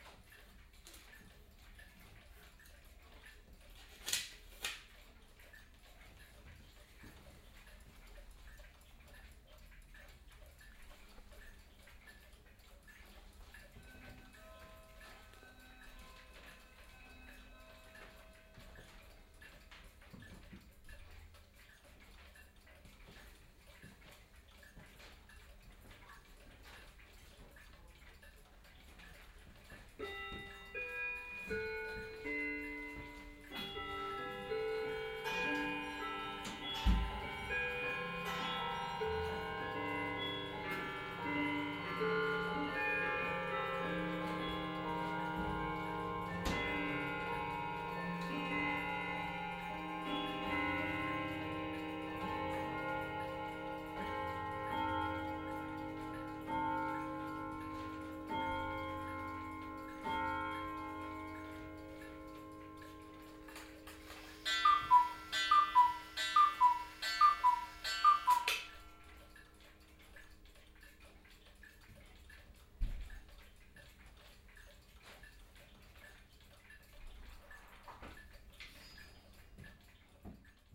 Bad Orb, Uhrmacher Wunderlich - Three o'clock
Mr. Wunderlich has quite a small shop with a lot of clocks, all ticking. Three o'clock is anounced several times. Binaural recording.
Bad Orb, Germany, 26 November 2016